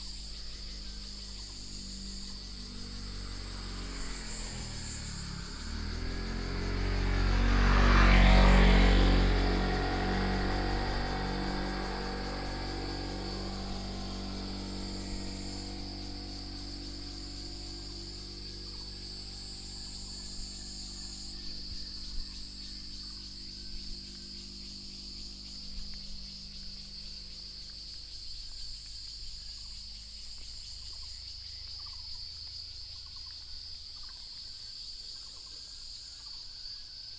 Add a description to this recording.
Morning in the mountains, Birdsong, Cicadas sound, Frogs sound, Traffic Sound